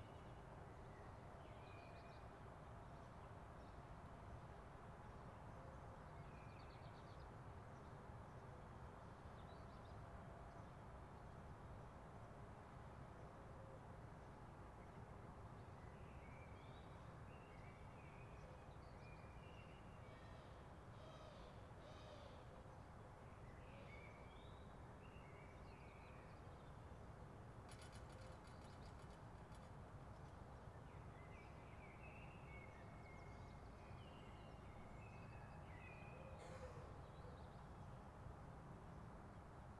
FenetreRue 24 Rue Edmond Nocard, Maisons-Alfort, France - Quiet Morning in Maisons-Alfort during covid-19
Quiet morning recording in Paris Suburb urban rumor birds
It's 6 o clock. We can here some Feral parrots, it's wild birds, witch are spreading into Paris Suburb for a few years
During Covid 19 containment
Recorder: Zoom H4Npro